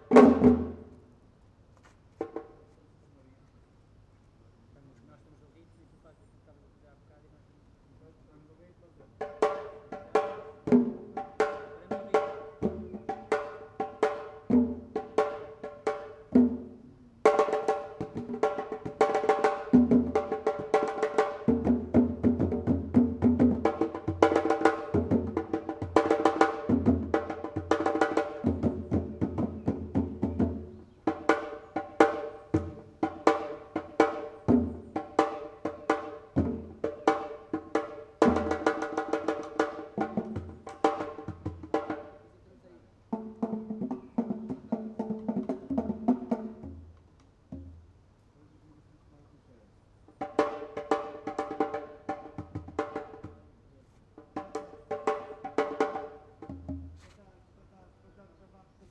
lisbon, R. de Julio de Andrade
Lisbon, Portugal, 30 June 2010, ~17:00